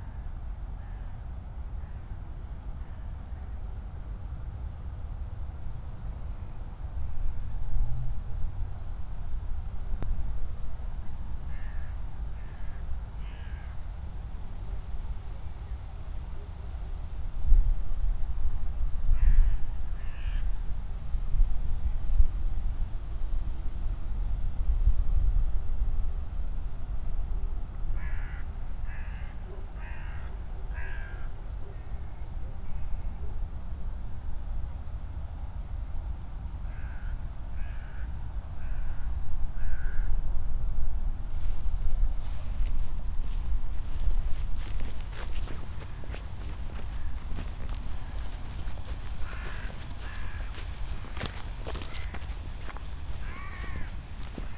path of seasons, october meadow, piramida - october meadow